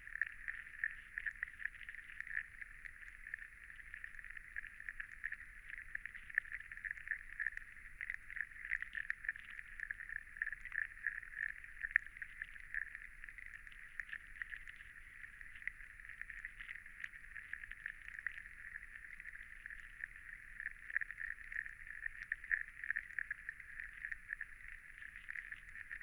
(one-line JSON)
{"title": "Cape Farewell Hub The WaterShed, Sydling St Nicholas, Dorchester, UK - Sydling Water :: Below the Surface 2", "date": "2022-04-09 11:45:00", "description": "The WaterShed - an ecologically designed, experimental station for climate-focused residencies and Cape Farewell's HQ in Dorset.", "latitude": "50.79", "longitude": "-2.52", "altitude": "103", "timezone": "Europe/London"}